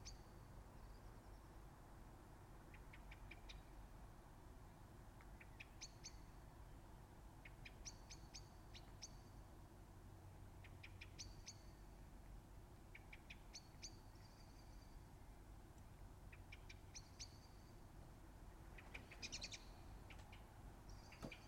Harp Meadow Ln, Colchester, UK - Fox Screaming, 1am.
A fox recorded with USI Pro around 1am recorded onto a mixpre6.
29 December, 01:00